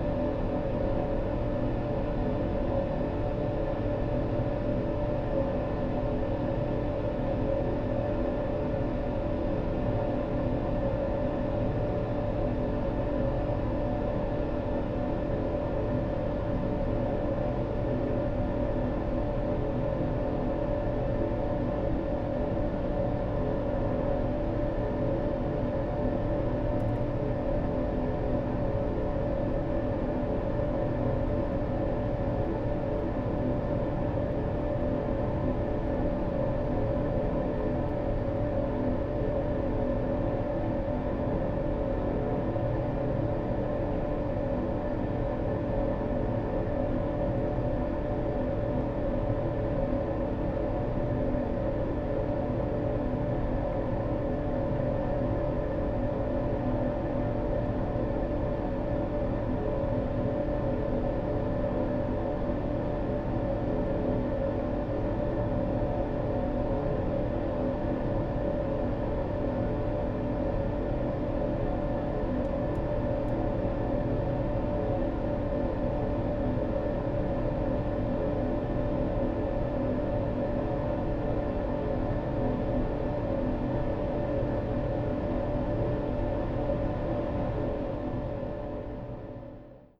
DB area, Krefelder Wall, Köln - tubes, flow, drone
somethings which flows in two iron tubes
(Sony PCM D50, Primo EM172)